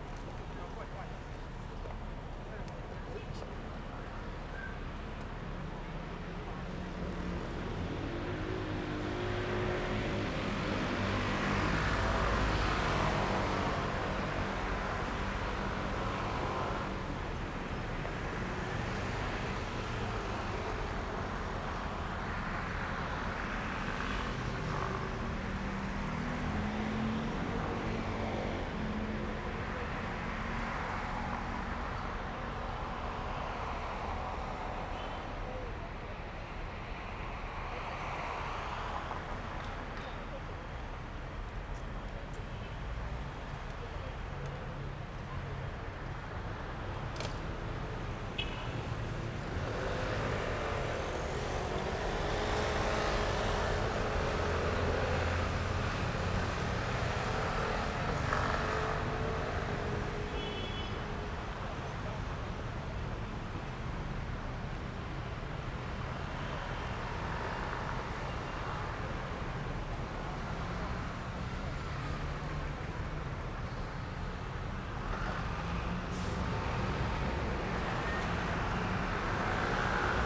In the corner of the street, Followed a blind, The visually impaired person is practicing walking on city streets, Zoom H6 Ms + SENNHEISER ME67
Taipei City, Taiwan, 21 January 2014